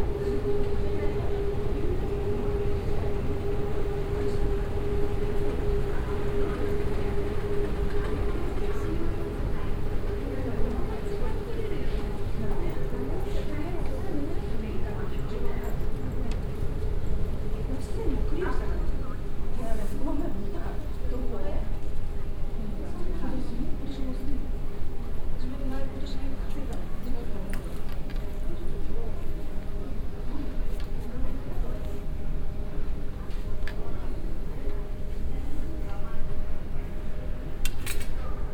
tokyo, airport, moving staircase
At the Narita Airport Terminal 2 - a repeated automatic female voice announcement at the moving staircase.
Voices of passing by passengers.
international city scapes - topographic field recordings and social ambiences
28 June 2011, 18:22